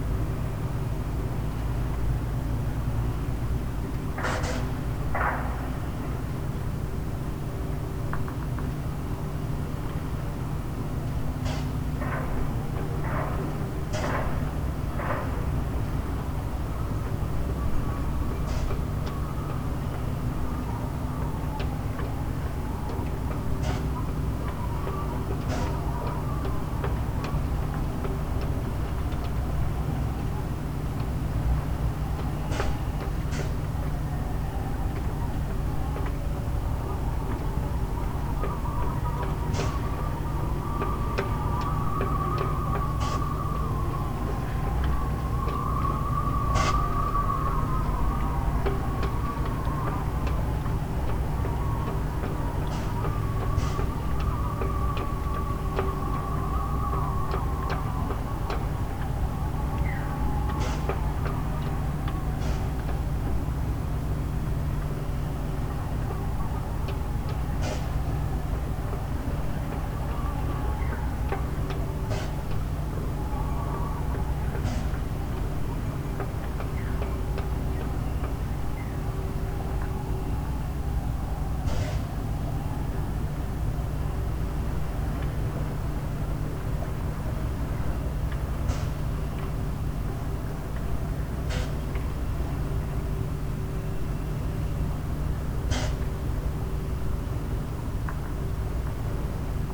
{"title": "lemmer, vuurtorenweg: parkplatz - the city, the country & me: parking area vis-à-vis of a concrete factory", "date": "2011-06-21 10:15:00", "description": "drone and mechanical noise of a concrete factory, wind blows through sailboat masts and riggings\nthe city, the country & me: june 21, 2011", "latitude": "52.84", "longitude": "5.71", "altitude": "4", "timezone": "Europe/Amsterdam"}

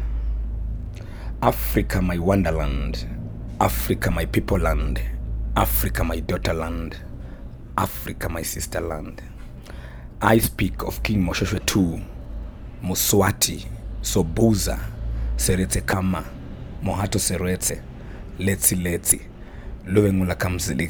{"title": "The Book Cafe, Harare, Zimbabwe - The Black Poet sings “Africa, my Wonderland…”", "date": "2012-10-19 17:50:00", "description": "The Black Poet aka Mbizo Chiracha recites his work for my mic in the small accountant’s office at the Book Café Harare, where he often presents his songs during Sistaz Open Mic and other public events. In the middle of the piece the poet asks: “Where are you African names? In which clouds are you buried…?”", "latitude": "-17.83", "longitude": "31.06", "altitude": "1489", "timezone": "Africa/Harare"}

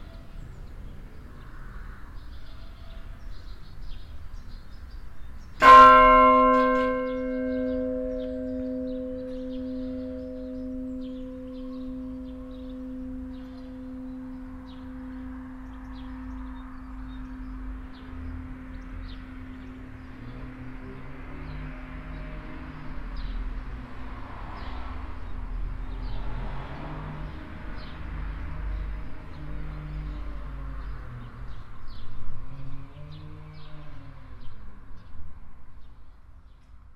{"title": "kehmen, ambience and church bell", "date": "2011-08-08 19:08:00", "description": "Nearby the church on a sunday morning. Some hen cackle, a tractor passes by, a man loads some buckets on a wagon and the half past bell of the church.\nKehmen, Umgebung und Kirchenglocke\nNeben der Kirche an einem Sonntagmorgen. Einige Hennen gackern, ein Traktor fährt vorbei, ein Mann lädt Eimer auf einen Wagen und die Kirchglocke läutet zur halben Stunde.\nKehmen, ambiance et cloche d'église\nA proximité de l’église, un dimanche matin. Des poules caquètent, un tracteur passe, un homme charge des seaux sur un charriot et la cloche de l’église sonne la demie.\nProject - Klangraum Our - topographic field recordings, sound objects and social ambiences", "latitude": "49.90", "longitude": "6.04", "altitude": "488", "timezone": "Europe/Luxembourg"}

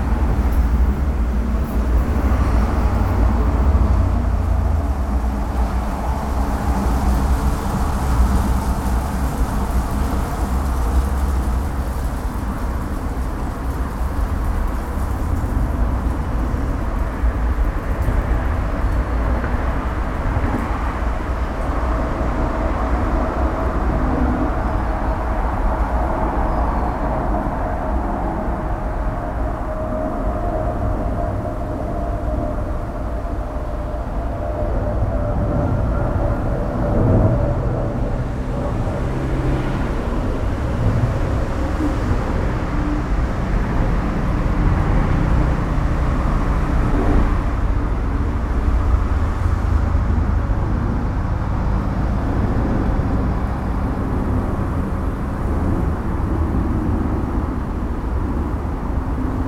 {"title": "Cologne, Riehl, An der Schanz - Traffic", "date": "2013-10-02 11:30:00", "description": "A tram leaving, cars passing by, a plain flying over, another tram arriving and leaving", "latitude": "50.97", "longitude": "6.99", "altitude": "51", "timezone": "Europe/Berlin"}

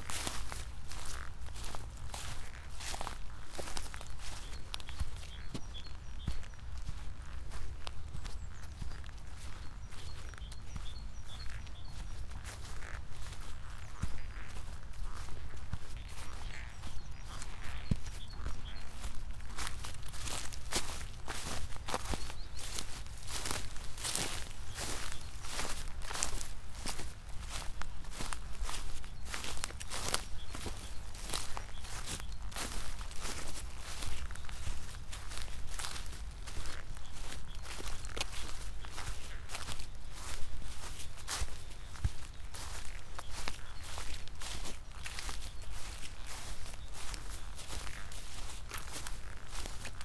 {"title": "path of seasons, march meadow, piramida - crow on a tree branch, last year grass, walking", "date": "2015-03-15 14:08:00", "latitude": "46.57", "longitude": "15.65", "altitude": "363", "timezone": "Europe/Ljubljana"}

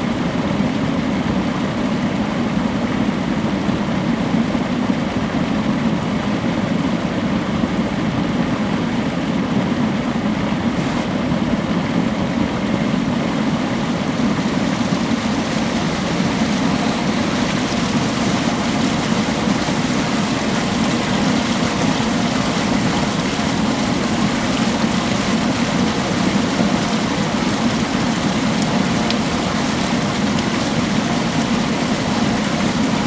{"title": "Výškov, Česká republika - pipes", "date": "2016-10-18 15:00:00", "description": "sounds of the stream pipes from the sedimentation lake of the power station Počerady", "latitude": "50.42", "longitude": "13.65", "altitude": "250", "timezone": "Europe/Prague"}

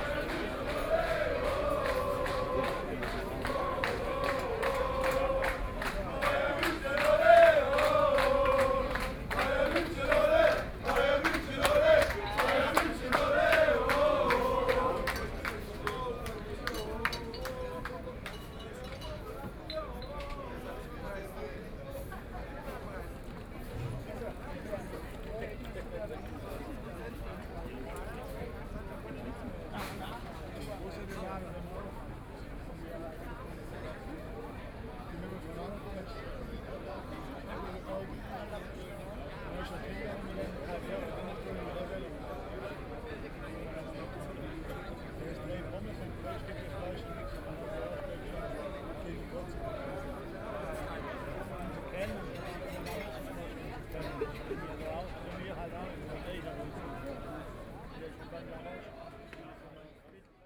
{"title": "Viktualienmarkt, Munich - In the market", "date": "2014-05-10 12:27:00", "description": "In the market, holidays, Football fan", "latitude": "48.14", "longitude": "11.58", "altitude": "520", "timezone": "Europe/Berlin"}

